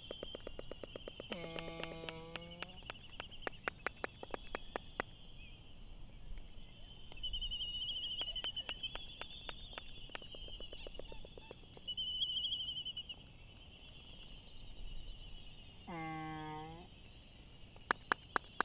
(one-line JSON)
{"title": "United States Minor Outlying Islands - Laysan albatross dancing ...", "date": "2012-03-16 19:14:00", "description": "Laysan albatross dancing ... Sand Island ... Midway Atoll ... open lavaliers on mini tripod ... back ground noise and windblast ...", "latitude": "28.22", "longitude": "-177.38", "altitude": "9", "timezone": "GMT+1"}